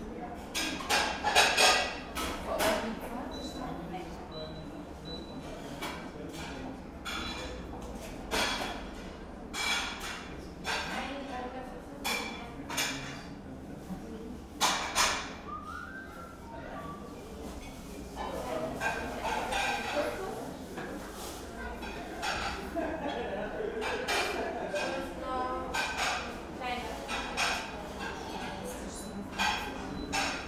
cafe ambience sunday afternoon.
(quiche, red wine, espresso, cheesecake)
aachenerstrasse, cafe schmitz, 10 October 2010, 14:30